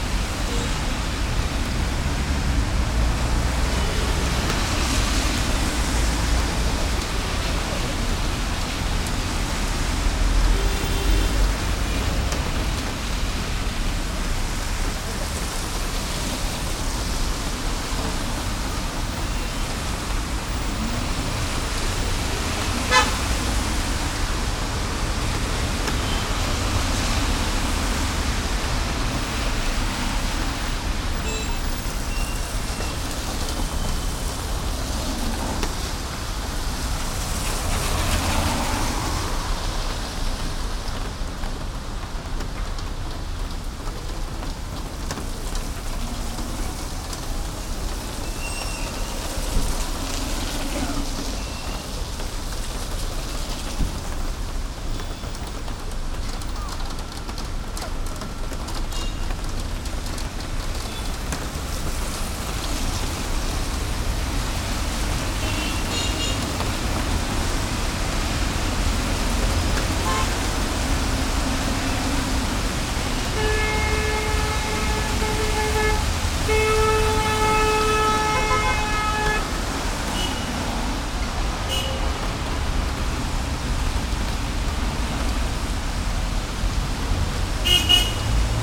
Meilan, Haikou, Hainan, China - Rainy bus stop at Haikou City Hospital
Rainy bus stop at Haikou City Hospital on Haidian island. The traffic changes with the rain, more people taking busses and many moped riders having already rushed to get home before the skies opened.
Recorded on Sony PCM-M10 with built-in microphones.